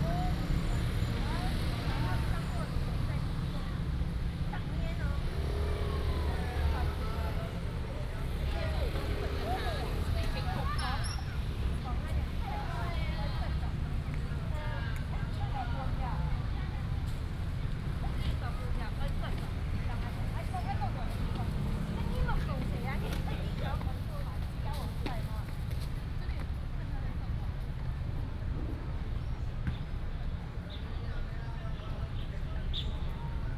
竹東戲曲公園, Ren’ai Rd., Zhudong Township - in the Park
in the Park
Zhudong Township, Hsinchu County, Taiwan, 17 January 2017